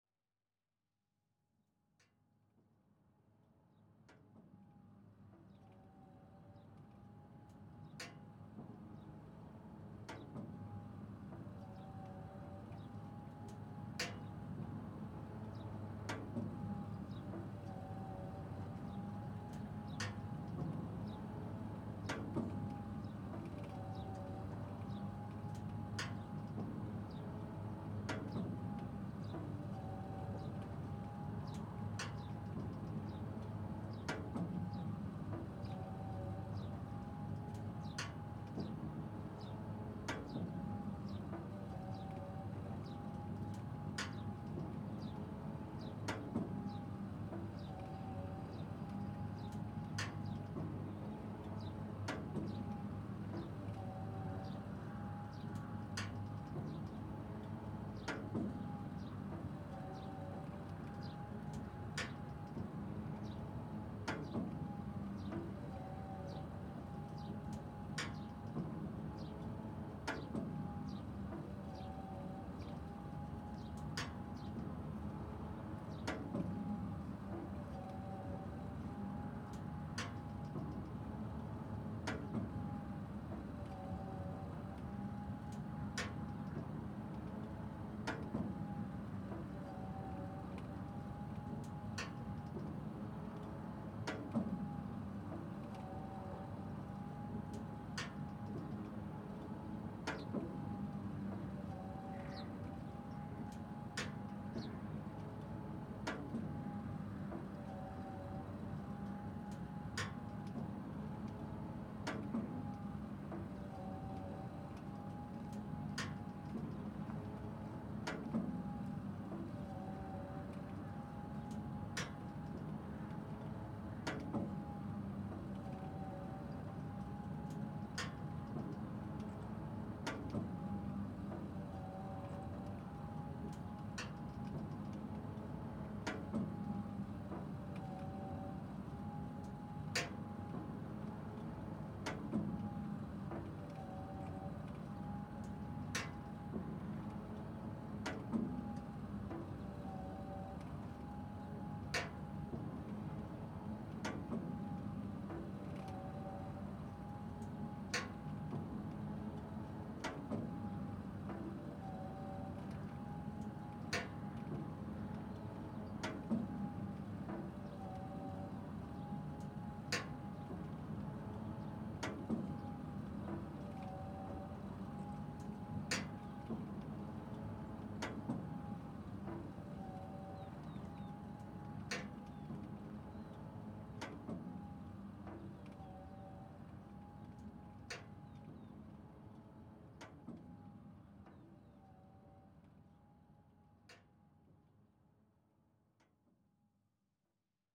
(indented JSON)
{
  "title": "2HHF+2F الوردة، Bahreïn - Extracteur de Pétrole Schlumberger",
  "date": "2021-05-31 15:30:00",
  "description": "Dans le désert du bargain en direction du \"Three of Life\" le paysage de champ pétrolifère voit défiler une succession d'extracteurs. ici le \"Schlumberger\".",
  "latitude": "26.03",
  "longitude": "50.57",
  "altitude": "33",
  "timezone": "Asia/Bahrain"
}